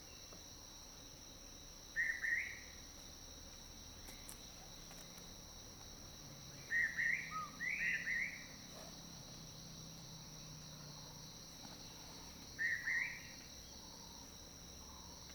Bird calls, Early morning
Zoom H2n MS+XY